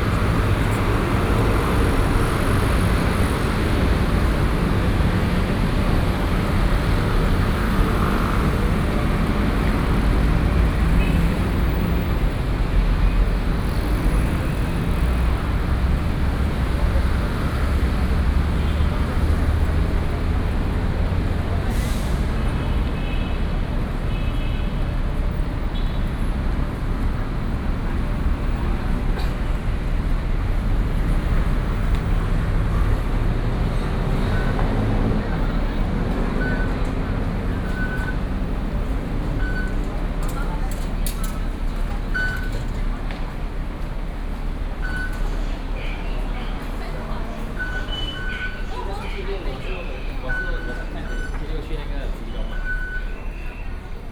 Away from the main road into the MRT station
Da’an District, Taipei City, Taiwan, 18 June 2015